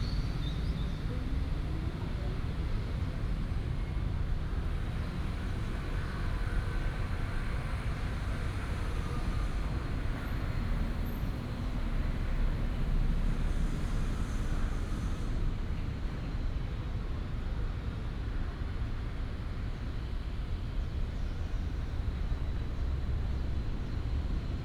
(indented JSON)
{
  "title": "錦安公園, Lishui St., Da’an Dist., Taipei City - in the Park",
  "date": "2015-07-21 09:08:00",
  "description": "in the Park, Bird calls, traffic sound, Distance came the sound of construction",
  "latitude": "25.03",
  "longitude": "121.53",
  "altitude": "16",
  "timezone": "Asia/Taipei"
}